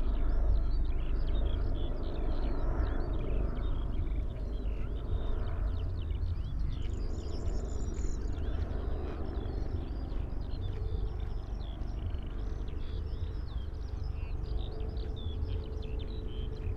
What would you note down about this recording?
Sunday afternoon, remote sounds from Karneval der Kulturen, musicians exercising nearby, skylarcs singing, a corn bunting (Grauammer) in the bush, a helicopter above all, etc. (Sony PCM D50, Primo EM172)